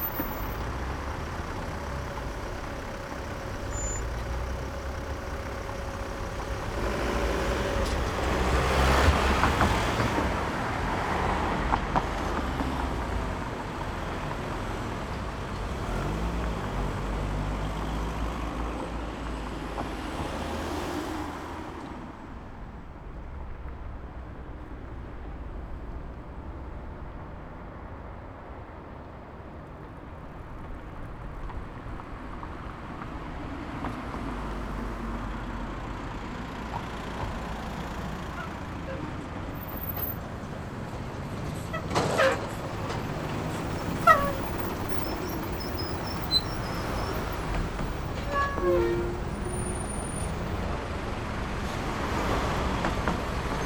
Berlin Wall of Sound, bridge over Teltowkanal at traffic light 080909